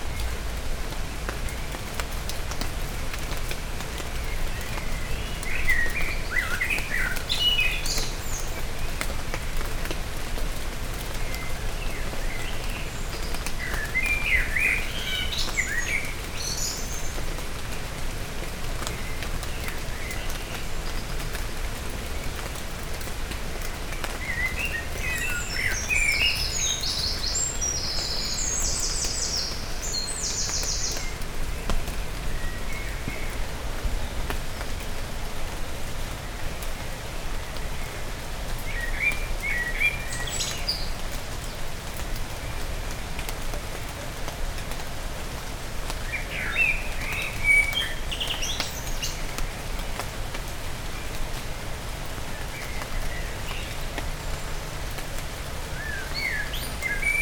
Mont-Saint-Guibert, Belgique - Rain
In an abandoned mill, rain is falling. In the wet trees, blackbirds give a delicious song.
Mont-Saint-Guibert, Belgium, June 2016